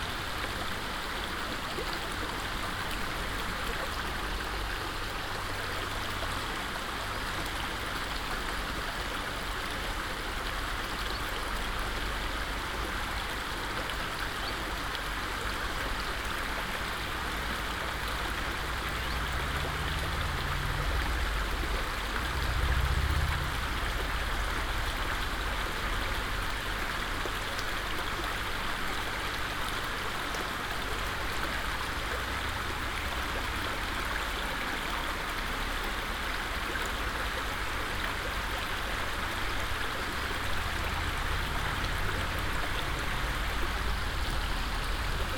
At a street bridge at a stream that flows through the village. The sound of the floating low water, birds and some traffic passing the bridge.
Kautenbach, Brücke, Fluss
An einer Brücke beim Fluss, der durch das Dorf fließt. Das Geräusch von fließendem flachen Wasser, Vögel und etwas Verkehr auf der Brücke.
Kautenbach, pont, ruisseau
Sur un pont routier au-dessus d’un ruisseau qui coule à travers le village. Le son du courant, des oiseaux et le trafic qui traverse le pont.
Project - Klangraum Our - topographic field recordings, sound objects and social ambiences
Luxembourg